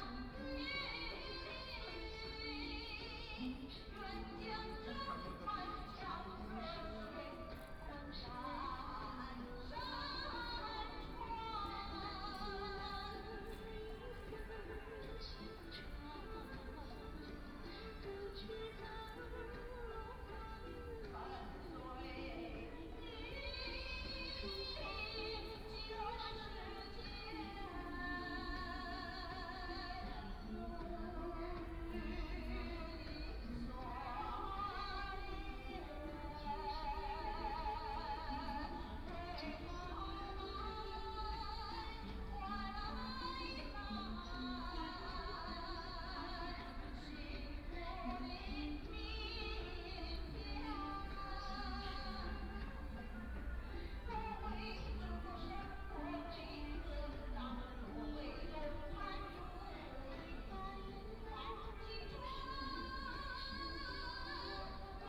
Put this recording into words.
A group of women dancing music used, Left behind a woman is singing, Binaural recording, Zoom H6+ Soundman OKM II